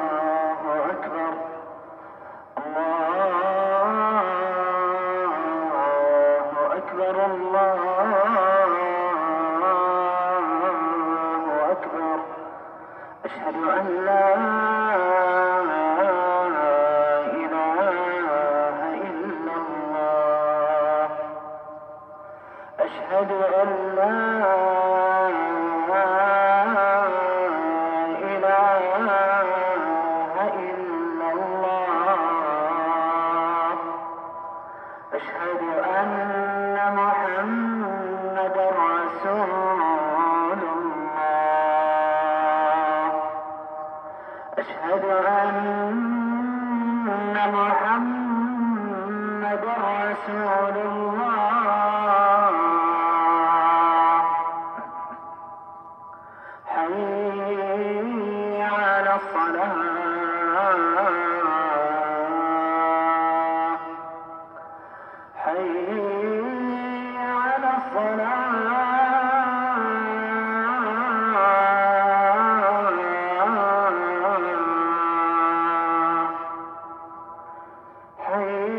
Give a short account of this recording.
Almahadeer city, Al Dur, Bahreïn, Mosquée - Appel à la prière de 18h34